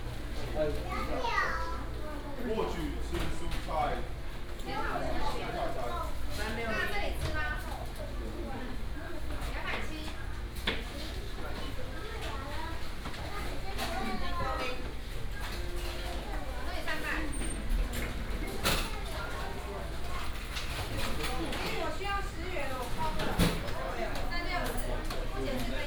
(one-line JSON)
{"title": "麥當勞羅東興東店, Yilan County - Diner counter", "date": "2017-12-09 10:52:00", "description": "At the fast food shop, Diner counter, Binaural recordings, Sony PCM D100+ Soundman OKM II", "latitude": "24.68", "longitude": "121.77", "altitude": "15", "timezone": "Asia/Taipei"}